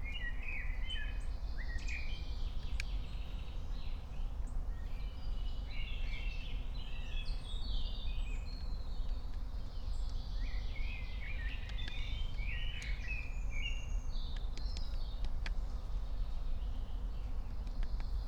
15:39 Berlin, Königsheide, Teich - pond ambience
Deutschland, 5 June 2021